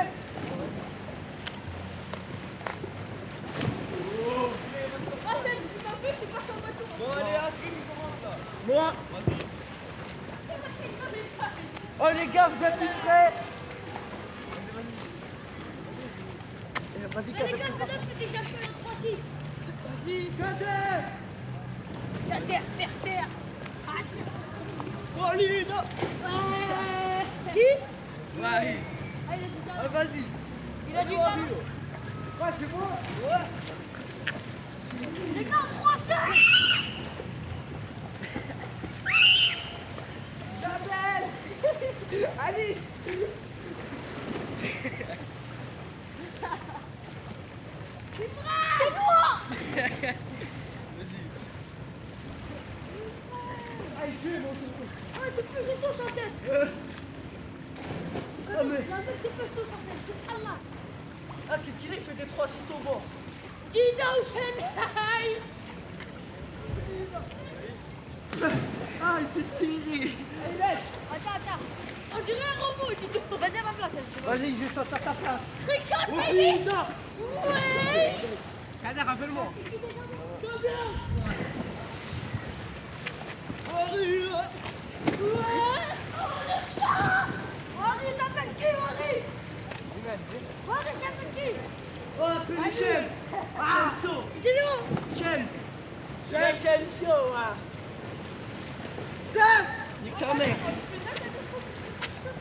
Marseille, near Quai Marcel Pagnol - Baignade interdite.
[Hi-MD-recorder Sony MZ-NH900, Beyerdynamic MCE 82]